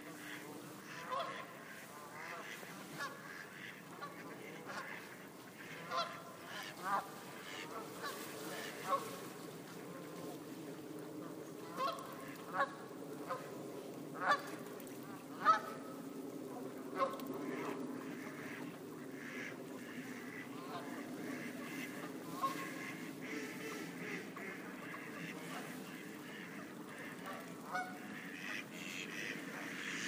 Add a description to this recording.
Soundscape of birds in the stream by the TH Path